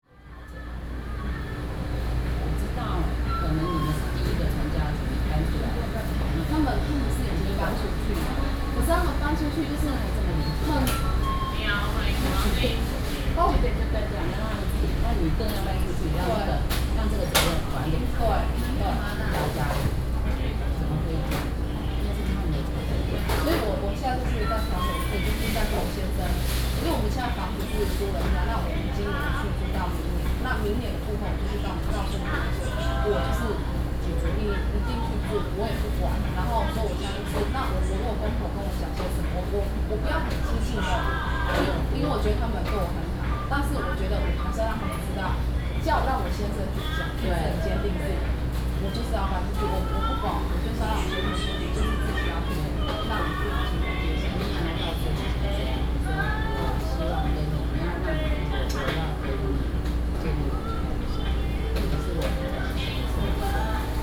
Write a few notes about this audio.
In the convenience store inside, Binaural recordings, Sony PCM D50 + Soundman OKM II